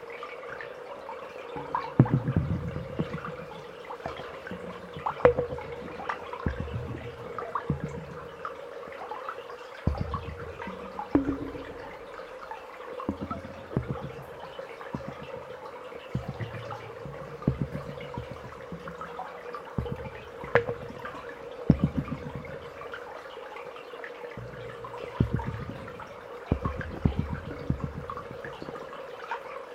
In an underground mine, a strange pipe sound. Water is entering in it and makes this bubbles sounds. In the second part of this sound, I play with water (there's a little more water, making a dam). This sound is short because oxygen level was extremely low. It's not very good for my health ;-)
All this water music is natural.